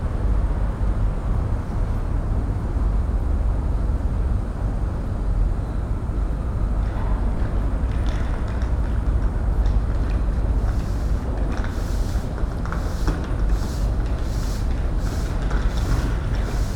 Brussels, Rue Dejoncker, street sweeper.
Early morning, rather silent at this time, a street sweeper, some bicycles and women with heels.
PCM-M10 internal microphones.
Saint-Gilles, Belgium, 17 January 2012, 09:23